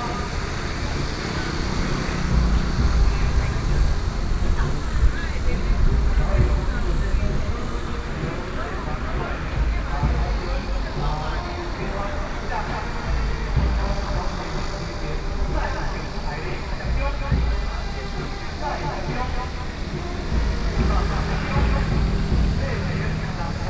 {
  "title": "Ln., Sanshu Rd., Sanxia Dist., New Taipei City - the funeral",
  "date": "2012-07-08 10:03:00",
  "description": "Next to the funeral is being held, Traffic Sound, Cicada sounds\nBinaural recordings, Sony PCM D50+Soundman okm",
  "latitude": "24.94",
  "longitude": "121.38",
  "altitude": "36",
  "timezone": "Asia/Taipei"
}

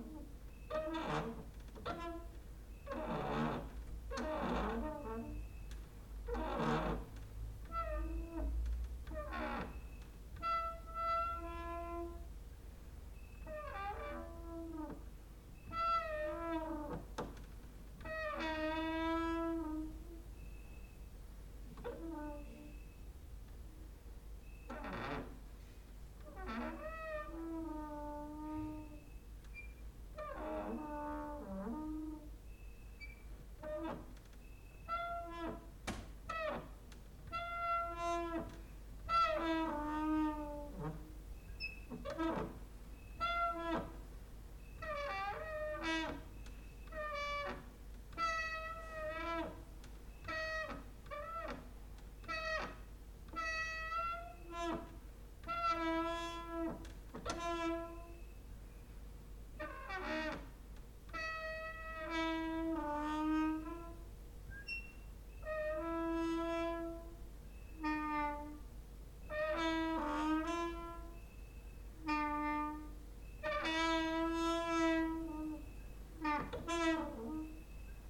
{
  "title": "Mladinska, Maribor, Slovenia - late night creaky lullaby for cricket/7",
  "date": "2012-08-14 01:37:00",
  "description": "cricket outside, exercising creaking with wooden doors inside",
  "latitude": "46.56",
  "longitude": "15.65",
  "altitude": "285",
  "timezone": "Europe/Ljubljana"
}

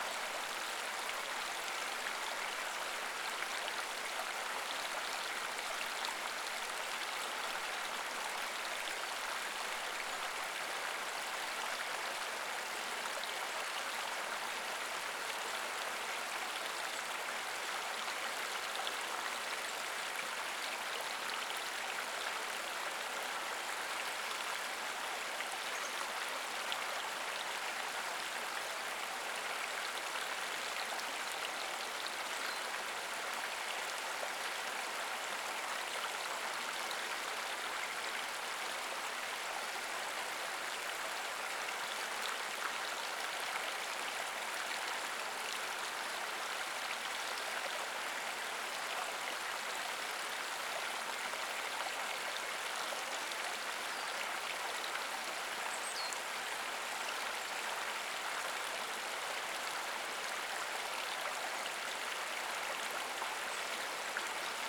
{
  "title": "Hong Kong Trail Section, The Peak, Hong Kong - H013 Distance Post",
  "date": "2019-01-02 07:47:00",
  "description": "The thirteenth distance post in HK Trail, located at the south-west side of the Peak. You can hear the running water stream and some morning birds.\n港島徑第十三個標距柱，位於太平山頂西南面。你可以聽到潺潺流水聲和晨早的鳥鳴。\n#Water, #Stream, #Bird, #Plane",
  "latitude": "22.27",
  "longitude": "114.15",
  "altitude": "288",
  "timezone": "Asia/Hong_Kong"
}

